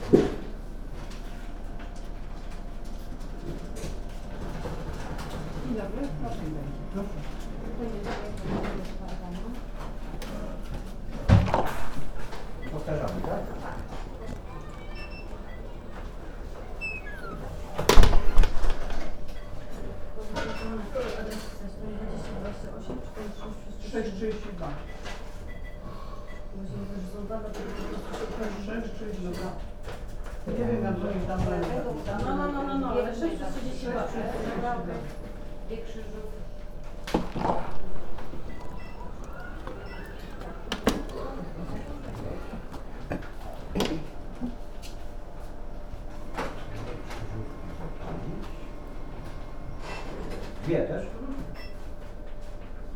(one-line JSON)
{"title": "Jana III Sobieskiego housing complex - lottery point", "date": "2019-06-05 10:53:00", "description": "ambience of a crowded lottery outlet. Retirees paying their bills and buying the lottery tickets. Stamp bang. Customers walking in and out, banging the door. Some joke with the clerk about the methods how to hit the jackpot. (roland r-07)", "latitude": "52.46", "longitude": "16.91", "altitude": "102", "timezone": "Europe/Warsaw"}